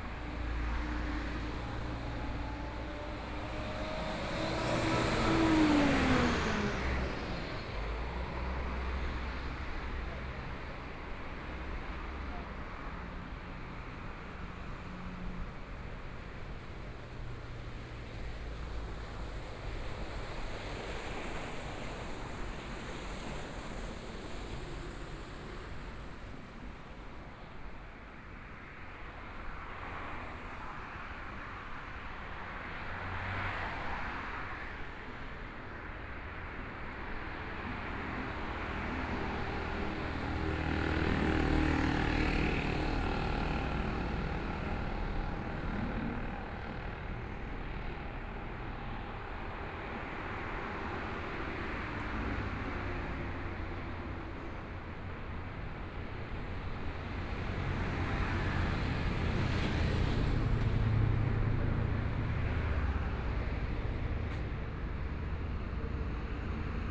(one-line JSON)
{"title": "Zhongyang Rd., Ji'an Township - walking on the Road", "date": "2014-02-24 10:48:00", "description": "walking on the Road, Environmental sounds, Traffic Sound\nBinaural recordings\nZoom H4n+ Soundman OKM II", "latitude": "23.99", "longitude": "121.59", "timezone": "Asia/Taipei"}